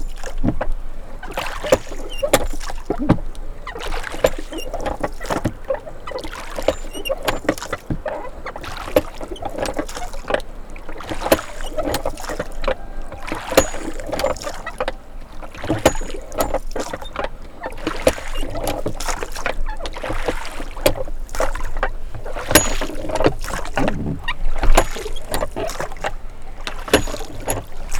Unieszewo, Jezioro, Wiosła - Paddling boat (2)
Poland, July 2009